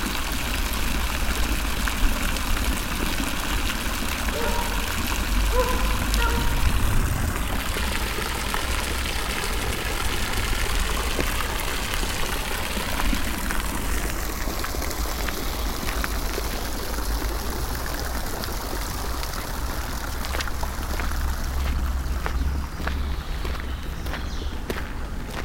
St. Gallen (CH), fountain
park near museum/theatre. recorded june 8, 2008. - project: "hasenbrot - a private sound diary"
Saint Gallen, Switzerland